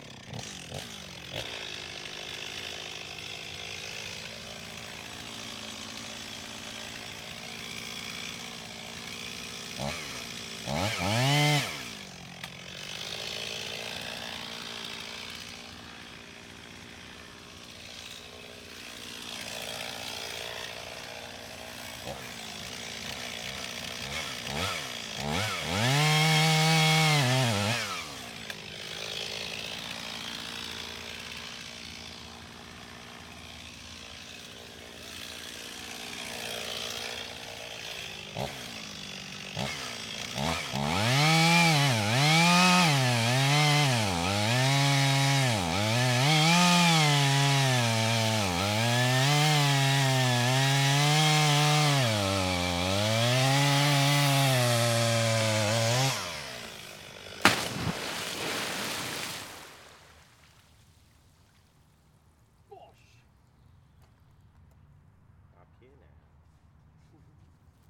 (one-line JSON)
{"title": "Durweston, Dorset, UK - Tree Felling", "date": "2012-06-26 18:35:00", "description": "Chainsaw felling a large tree into water.", "latitude": "50.88", "longitude": "-2.20", "altitude": "39", "timezone": "Europe/London"}